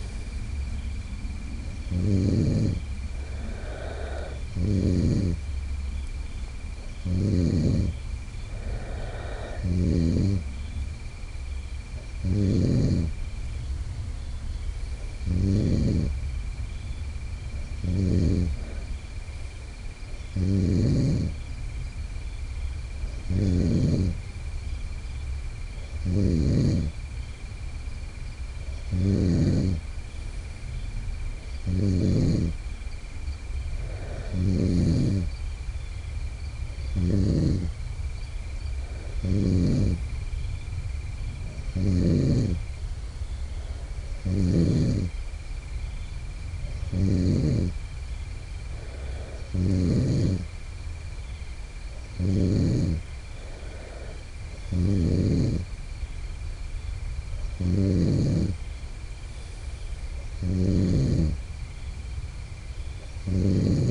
Recorded with a Marantz PMD661 and a pair of DPA 4060s.